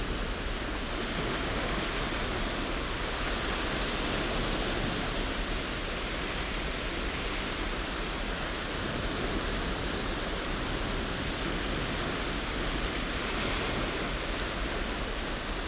audresseles, meeresufer bei ebbe, brandungswind
am meeresufer bei ebbe, morgens, die wellenamplituden in rauschigen intervallen, dazu stetiger auflandiger wind
fieldrecordings international:
social ambiences, topographic fieldrecordings